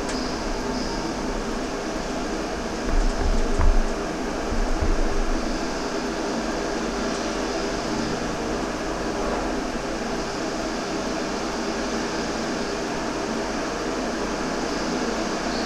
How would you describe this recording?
the sounds of the factory resonating through a drainpipe on the corner of the building. recorded with contact mics.